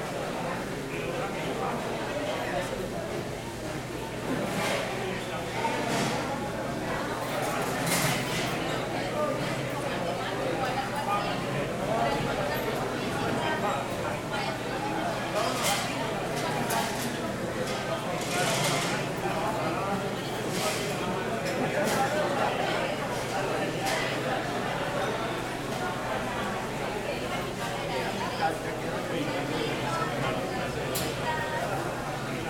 Cl., Medellín, Antioquia, Colombia - Zona de comida ingeniería
Zona de comidas ingeniería Universidad de Medellín, día soleado, hora de almuerzo.
Coordenadas: LN 6°13'48 LO 75°36'42
Dirección: Universidad de Medellín - Zona de comida Ingeniería
Sonido tónico: Conversaciones, pasos
Señal sonora: ruidos de sillas y platos
Grabado con micrófono MS
Ambiente grabado por: Tatiana Flórez Ríos - Tatiana Martinez Ospino - Vanessa Zapata Zapata